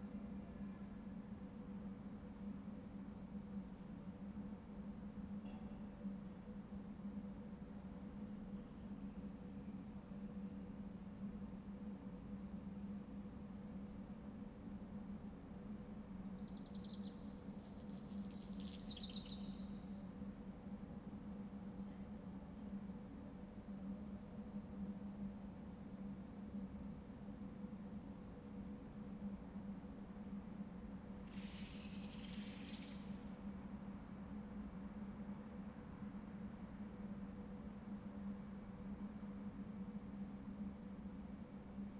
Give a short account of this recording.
Chapter XI of Ascolto il tuo cuore, città. I listen to your heart, city, Tuesday, March 17th 2020. Fixed position on an internal terrace at San Salvario district Turin, one week after emergency disposition due to the epidemic of COVID19. Start at 6:17 a.m. end at 7:17 a.m. duration of recording 60'00''. Sunset was at 6:39 a.m.